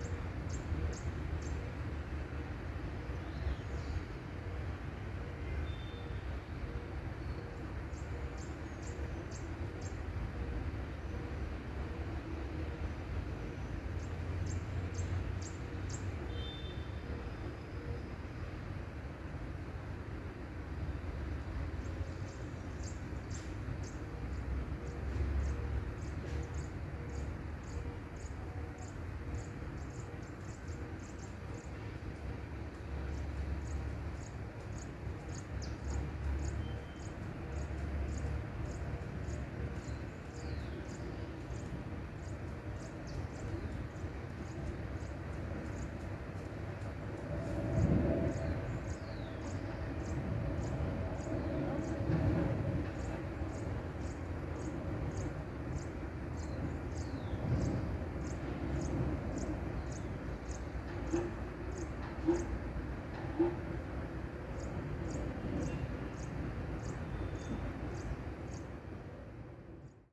Cl. 170 ##12-2 a, Bogotá, Colombia - PARK. MONDAY 5:00PM
Park near 170th Street. Birds can be heard singing, cars, trucks and motorcycles pass in the background. Car alarms are heard, heavy ambient noise. Car alarm sound in the background, at the end a plane passing.
Región Andina, Colombia, 27 May 2021, 05:00